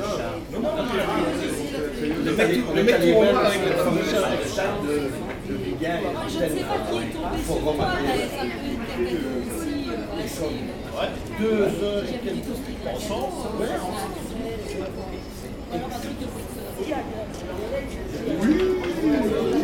Namur, Belgique - Bar terrace
Near the train station, people are sitting on the terrace and speaking loudly. During this time, we guess a good-natured discussion. Namur is a warm city.